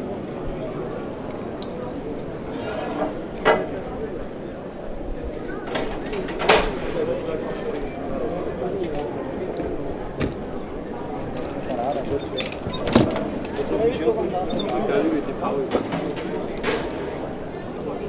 nadvori Hradu
Military music corpse leaving after the concert